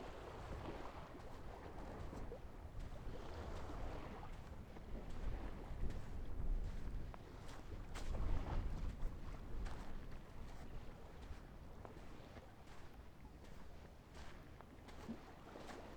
the city, the country & me: october 3, 2010
Germany, 3 October 2010